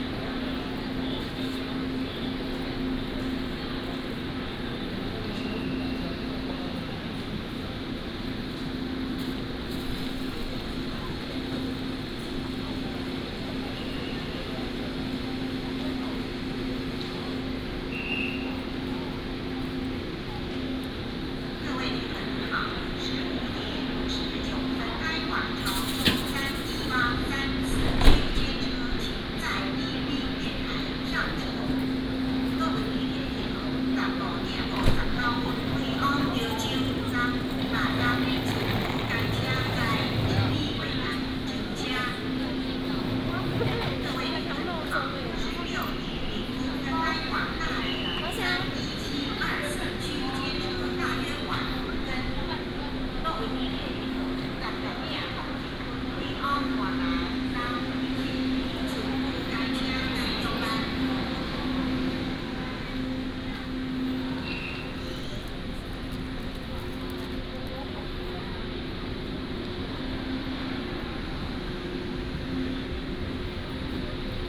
Xinying Station, 台南市新營區 - Walk at the station
Walk at the station, From the station hall, Through the underground road, To the station platform, Station information broadcast.